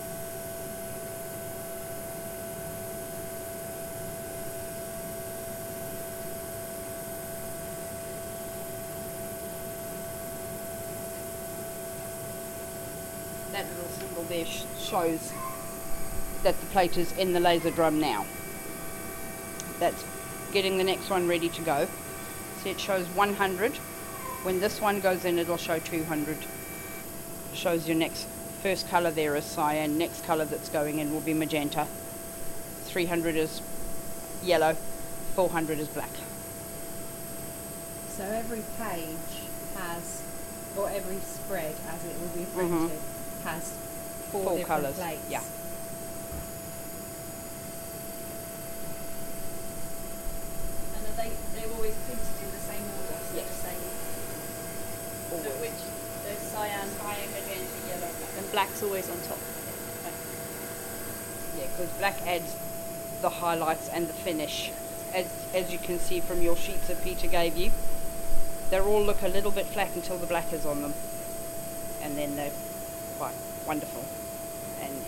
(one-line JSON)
{"title": "Williams Press, Maidenhead, Windsor and Maidenhead, UK - Litho plates being burned", "date": "2014-10-02 15:09:00", "description": "In this recording, the production manager at Williams Press - Mo - talks through how the Litho-plates are created for the Litho-printing process. She explains that there are four plates per 2-page spread in every book: one for each layer of ink. The sounds you can hear are mostly of the lasers inside the machine burning the impressions for each ink layer, but at the end there is a wondrous metallic sound of the freshly burned plates emerging from the machine with a slight wobble...", "latitude": "51.53", "longitude": "-0.73", "altitude": "30", "timezone": "Europe/London"}